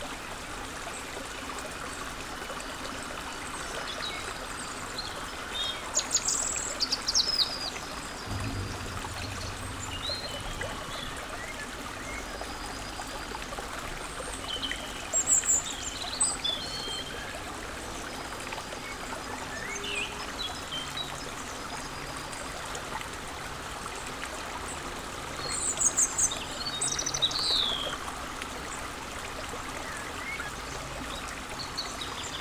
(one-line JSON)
{"title": "Walhain, Belgique - The river Orne", "date": "2016-04-10 16:20:00", "description": "Recording of the river Orne, in a pastoral scenery. Confluence with the Sart stream. Nervous troglodyte in the trees. Recorded with Lu-Hd binaural microphones.", "latitude": "50.63", "longitude": "4.63", "altitude": "104", "timezone": "Europe/Brussels"}